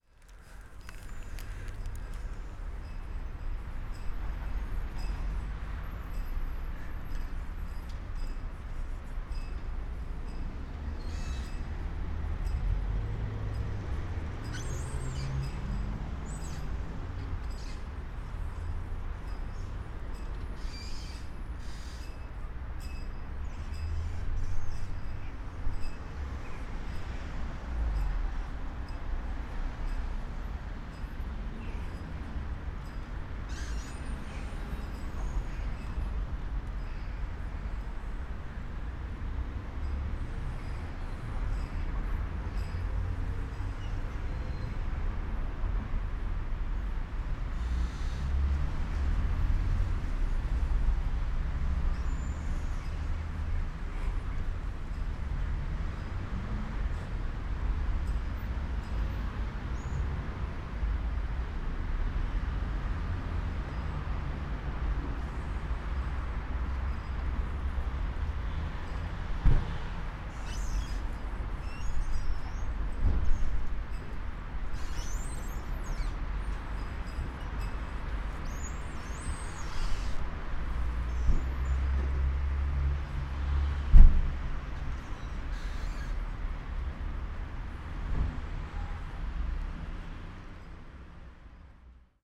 Binckhorst, Laak, The Netherlands - A flag pole squeaking
Traffic on a windy day, a chain slightly beating against an iron gate and a flag pole squeaking..
Binaural recording (dpa4060 into fostex FR2-LE).
Binckhorst Mapping Project.